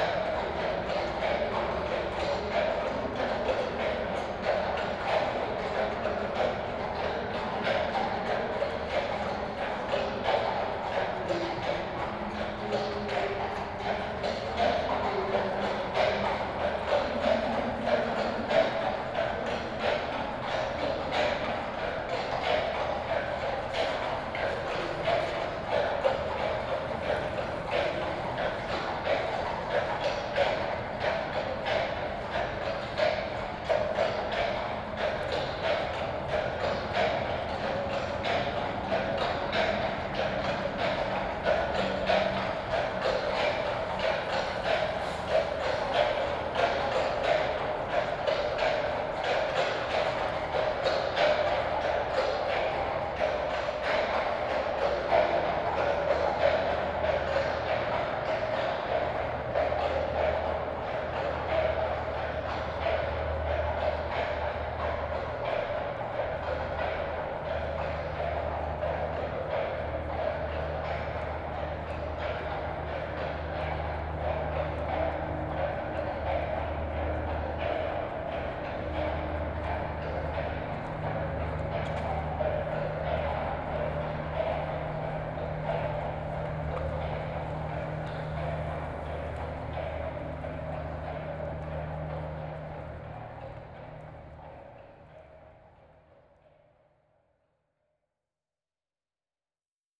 Tunnel at Paris, Francja - (376) BI Horses in a tunnel
Binaural recording of a horse patrol walking into a tunnel.
Sony PCM-D100, Soundman OKM
Île-de-France, France métropolitaine, France